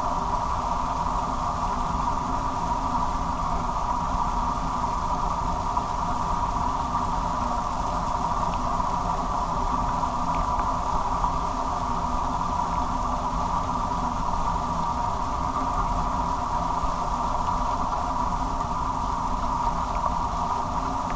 Nolenai, Lithuania, little dam
some kind of little dam with water falling down. recorded with small omnis and LOM geophone on the construction of the dam
Utenos rajono savivaldybė, Utenos apskritis, Lietuva, 21 March